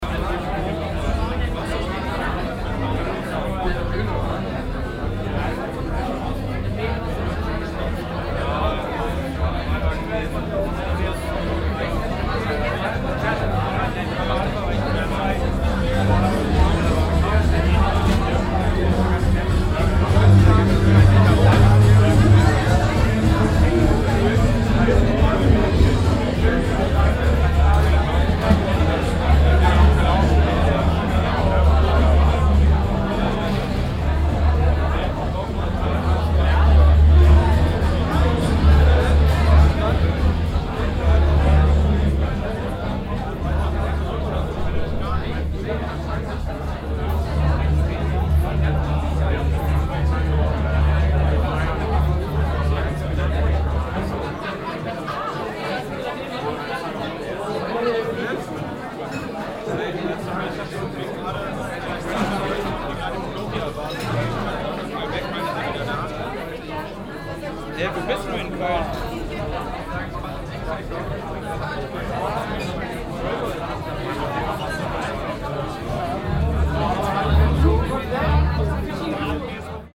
cologne, konrad adenauer ufer, rheintriaden, c/o pop publikum
fachsimpelndes multiplikatorenpublikum bei der eröffnung der c/o pop 2008
soundmap nrw:
social ambiences, topographic field recordings
August 2008